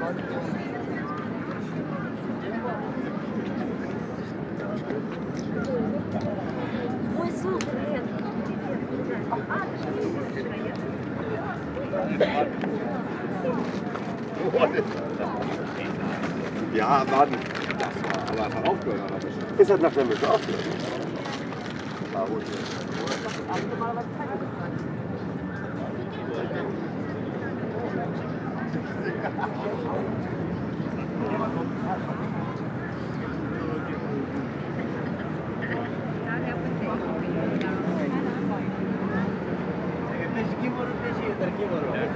Hamburg, Deutschland - Tourists drinking
Binnenalster, Alsterpavillon. The Außenalster river is like a big lake. It’s extremely traveled by tourists. This is a short walk in the middle of a devastating mass tourism. Huge amounts of tourists drinking, people in the bars, regular calls from tourist boats.
19 April 2019, 15:00